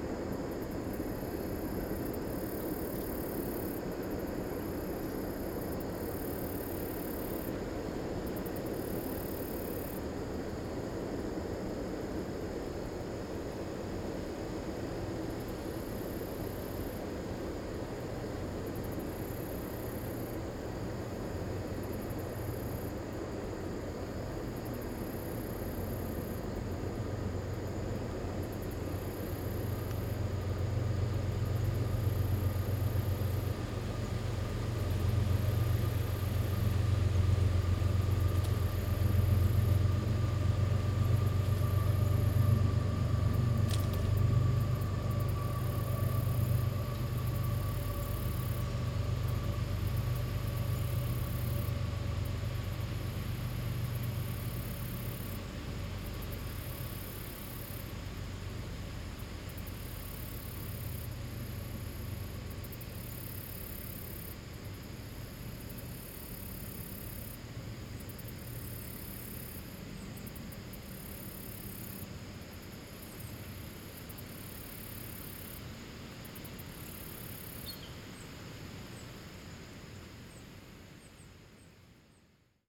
Castlewood Loop, Eureka, Missouri, USA - Castlewood Loop Train
Sound of a train passing in the woods.